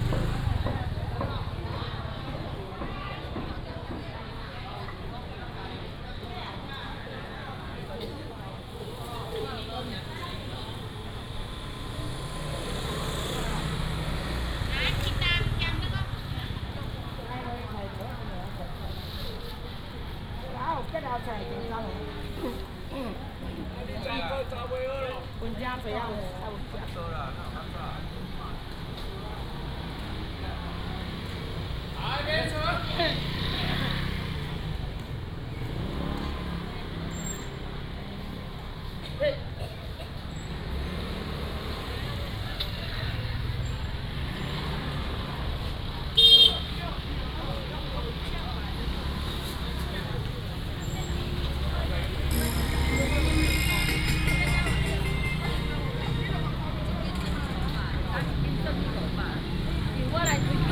Xinyi Rd., Shengang Township - Walking in the market
Walking in the market, Traffic sound, Vendors, motorcycle
Changhua County, Taiwan, 15 February 2017